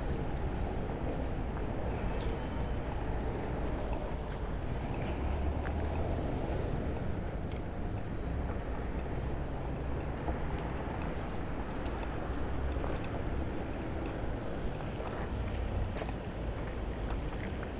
Favoriten, Wien, Austria - Pedestrian Crossovers
23 January 2017